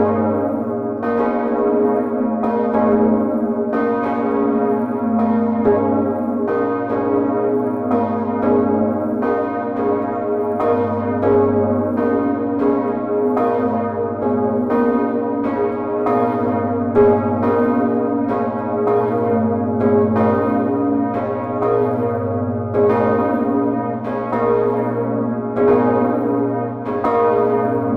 Archive recording of the two beautiful bells of the Amiens cathedral. Recorded into the tower, with an small Edirol R07. It's quite old. The bells are 4,5 and 3,6 tons.